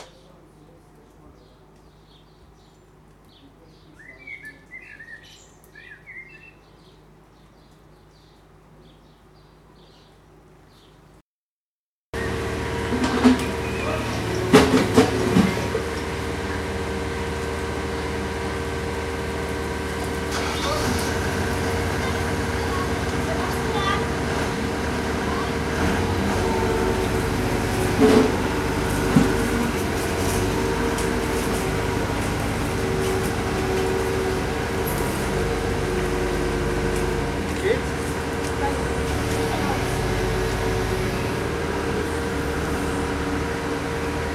Büchenbach, Erlangen, Deutschland - street works - laying fibre optic calbes
Some soundclips i recorded the last days. I combined them to one bigger part with little breaks.
There are different sounds of road works while laying fiber optic cables into the ground. (sawing machine, little earth mover, drilling etc.)
Bayern, Deutschland, European Union, 4 June